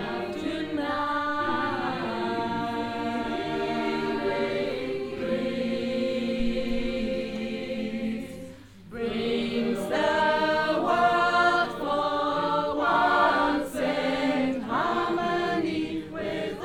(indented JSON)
{
  "title": "cologne, filmhaus, filmhaus choir",
  "description": "first performance of the cologne based filmhaus choir conducted by guido preuss - recording 01\nsoundmap nrw - social ambiences and topographic field recordings",
  "latitude": "50.95",
  "longitude": "6.95",
  "altitude": "50",
  "timezone": "Europe/Berlin"
}